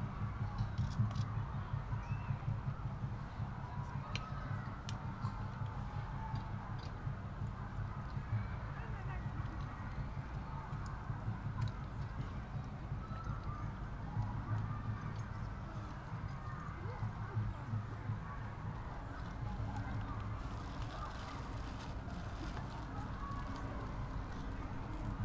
Tempelhofer Feld, Berlin, Deutschland - Berlin Sonic Places: Max Eastley, aeolian harps
Max Eastley on aeolian harps. The project Klang Orte Berlin/Berlin Sonic Places was initiated by Peter Cusack in the frame of his Residency at The DAAD Artists-in-Berlin Program and explores our relationship with and the importance of sound in the urban context.
Berlin, Germany